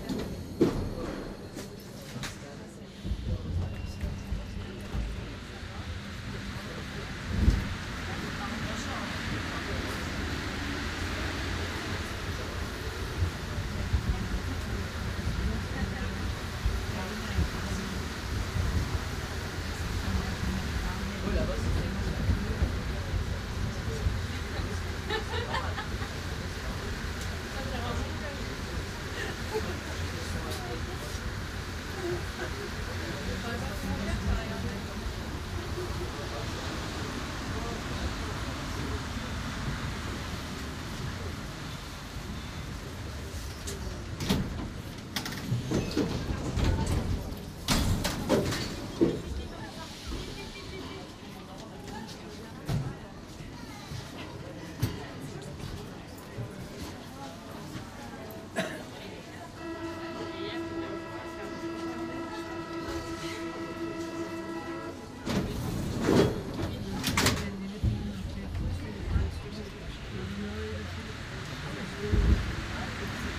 The whole metro trip from Raspail to Trocadéro, Paris. Note the terrible singer around 920. Binaural recording.